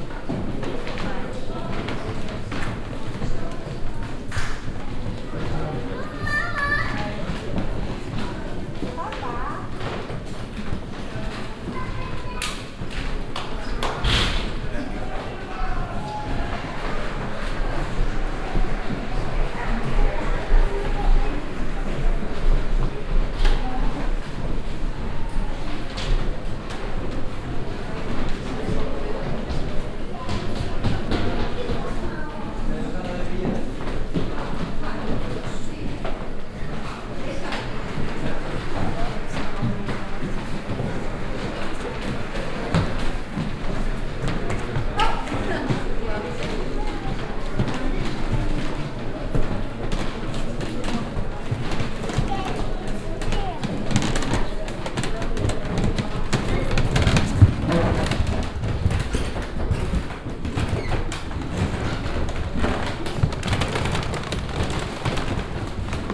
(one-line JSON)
{"title": "vianden, castle, wooden floor", "date": "2011-08-09 21:28:00", "description": "In the upper level of the castle. The sound of the wooden floor as the visitors move around on it.\nVianden, Schloss, Holzboden\nIm Obergeschoss des Schlosses. Das Geräusch des alten Holzbodens, auf dem die Besucher laufen.\nVianden, château, sol en parquet\nAu premier étage du château. Le bruit du parquet en bois sur lequel se déplacent les visiteurs.\nProject - Klangraum Our - topographic field recordings, sound objects and social ambiences", "latitude": "49.94", "longitude": "6.20", "altitude": "291", "timezone": "Europe/Luxembourg"}